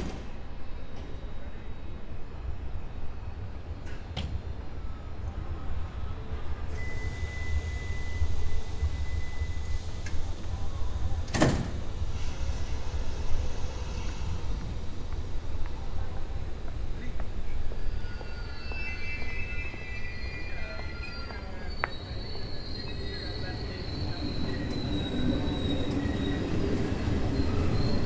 Perugia, Italy - at the train station
sounds of the train station
23 May 2014, 14:31